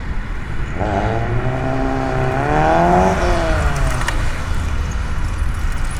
Binckhorst, Laak, The Netherlands - Tuned car
Tuned car recorded using DPA mics (binaural) and Edirol R-44
2 March 2012, 17:30